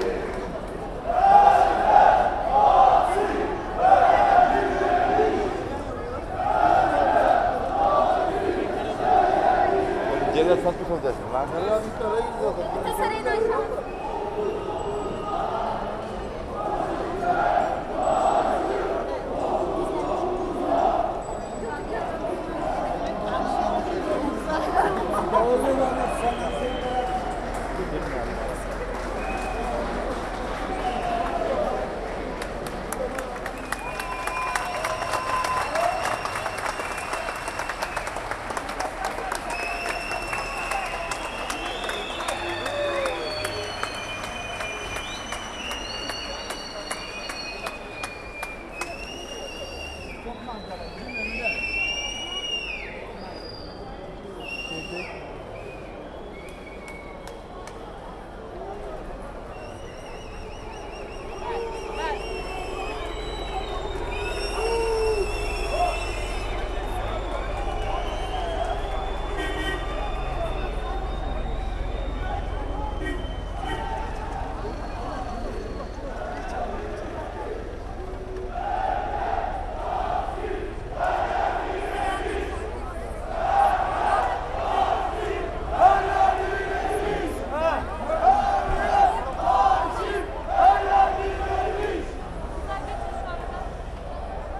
RIOT/ istanbul istiklal street
The protest in Istanbul still continue, everyday police water cannon trucks and several squadron of policemen over-equiped are positionned in Istiklal .
Istiklal street is one of the more frequented street in Istanbul, activist are screaming their dissatisfaction and are backed by the rest of the people.
Beyoğlu/Istanbul Province, Turkey, 3 August 2013, 18:30